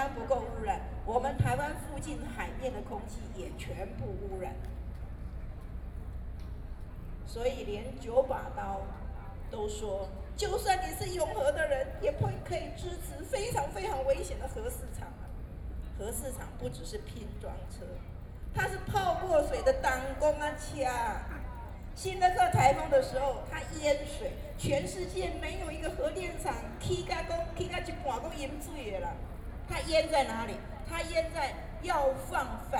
Ketagalan Boulevard, Taipei - speech
against nuclear power, Lawmakers are speech, Sony PCM D50 + Soundman OKM II